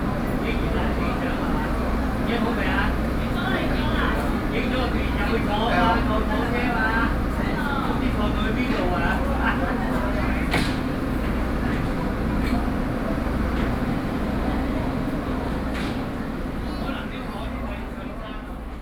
Jingtong St., Pingxi Dist., New Taipei City - the station
Pingxi District, New Taipei City, Taiwan, 13 November